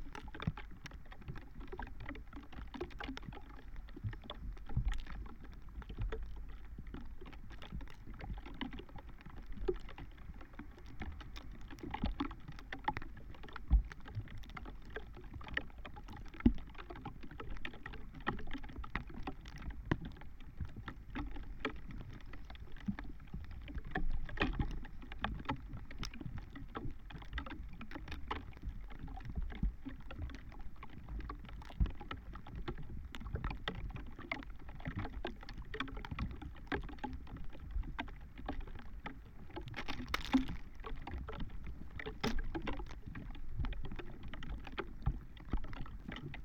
Utena, Lithuania, snowflakes on dried reed
first snowflakes falling on a single dried reed. contact microphones
24 November 2018